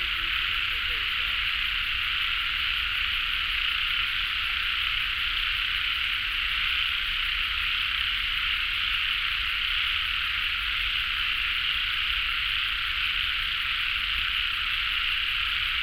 Frogs sound, Traffic Sound, Environmental Noise
Binaural recordings
Sony PCM D100+ Soundman OKM II + Zoom H6 MS